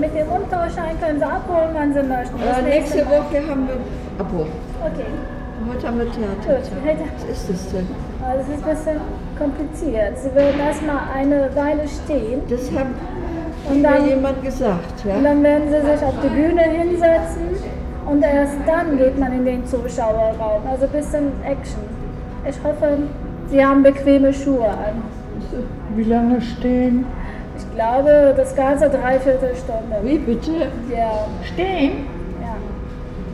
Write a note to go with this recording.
At the box office of the theatre. The sound of the amplified voice of the ticket agent and the voices of customers and other guests in the stone floor theatre entry. soundmap nrw - social ambiences, sonic states and topographic field recordings